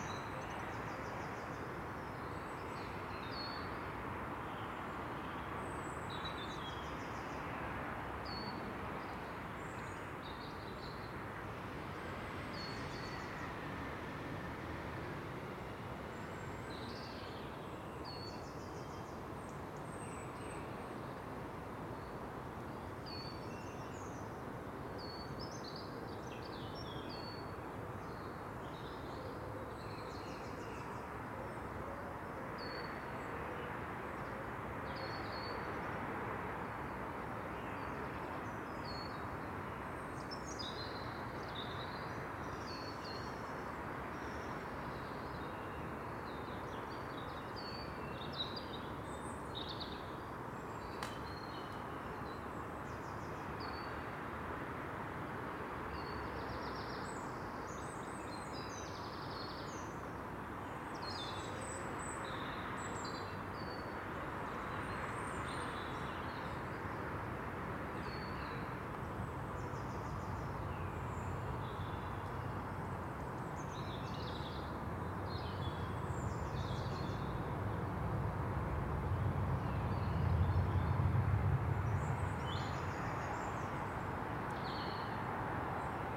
Contención Island Day 80 inner east - Walking to the sounds of Contención Island Day 80 Thursday March 25th
The Drive High Street Moor Road South Rectory Road Rectory Avenue Rectory Drive Stoneyhurst Road Alnmouth Drive
In the early dawn
I overlook a wooded vale
running down to the island shore
A seat beyond a fence
each weather-worn
beneath the trees
Hard pruned elder
a metro passes
a robin’s song
North East England, England, United Kingdom, 25 March, 06:27